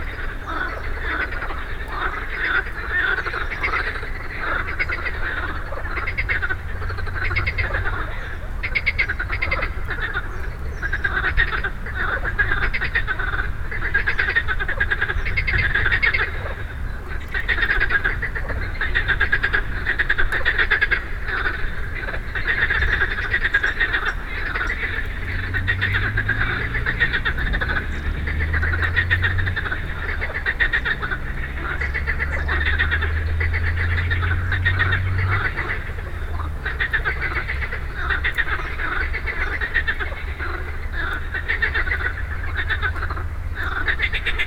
Niévroz, Les Grenouilles du Lac des Pyes / Frogs at the Pyes lake. It was during the week without planes because of the volcano in Iceland. Frogs were soooo happy.
Niévroz, France, 17 April 2010, ~16:00